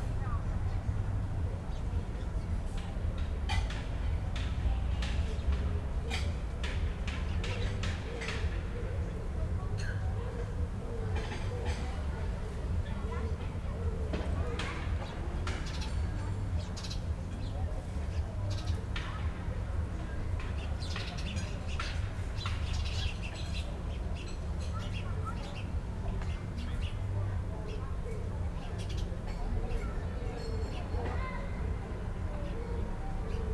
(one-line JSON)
{"title": "Wolfgang-Heinz-Straße, Berlin-Buch - within residential building blocks, evening ambience", "date": "2019-09-01 19:05:00", "description": "place revisited, late summer Sunday early evening, yard ambience between buildings\n(SD702, DPA4060)", "latitude": "52.63", "longitude": "13.49", "altitude": "57", "timezone": "Europe/Berlin"}